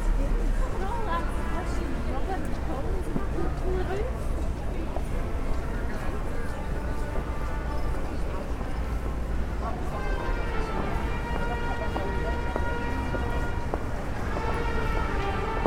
Manchester City Centre - Manchester Buskers
Perhaps the most annoying buskers in the world!